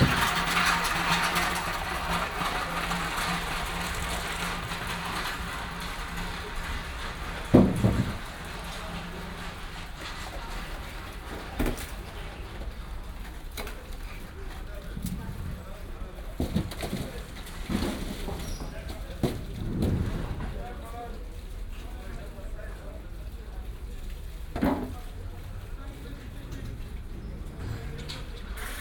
maybachufer, wochenmarkt, fischstand - marktaufbau, fischstand
09.09.2008 8:45
marktaufbau, fischstand, rollwagen fährt vorbei, mann säubert kisten und fische.
before opening, fish stand, man cleans boxes and fishes.